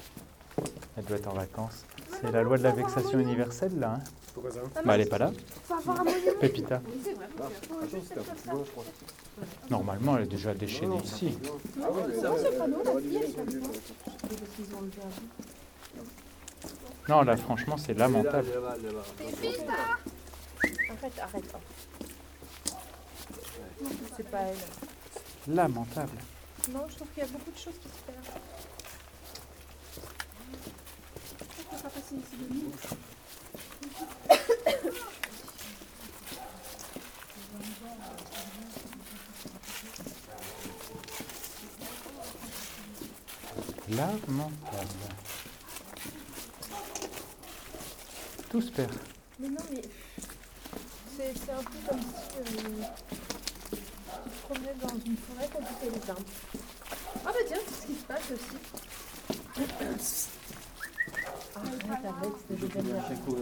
In a small path with big unruffled concrete walls, a dog is barking. It's name is Pepita. We know perfectly it barks the same everytime we pass here. This place is really a small city of dogs, it's shouting in every street !
Maintenon, France, 26 December 2016